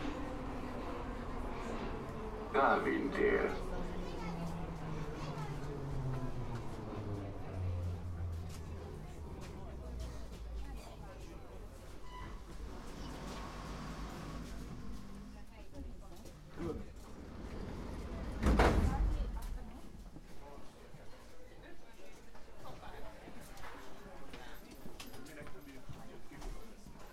{"title": "Budapest, Metro - Drive to the airport", "date": "2016-12-04 15:01:00", "description": "The metro is driving into the station, entering the metro, the ride from Deak Ferenc Ter to the last station in the direction to the airport. Tascam DR-100, recorded with the build in microphone.", "latitude": "47.50", "longitude": "19.05", "altitude": "109", "timezone": "GMT+1"}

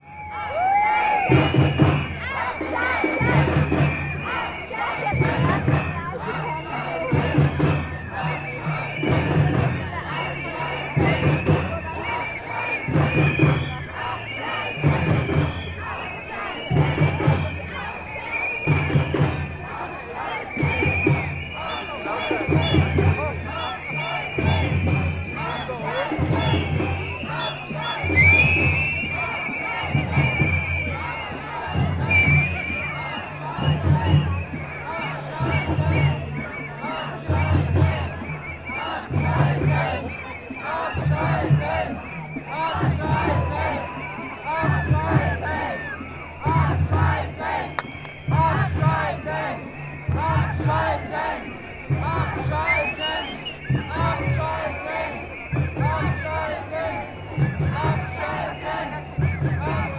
{"title": "berlin, klingelhöferstr. - anti nuclear power protests in front of conservative party CDU headquarter", "date": "2011-03-26 13:30:00", "description": "anti nuclear demo passing the headquarter of the conservative party CDU, volume rising...", "latitude": "52.51", "longitude": "13.35", "altitude": "38", "timezone": "Europe/Berlin"}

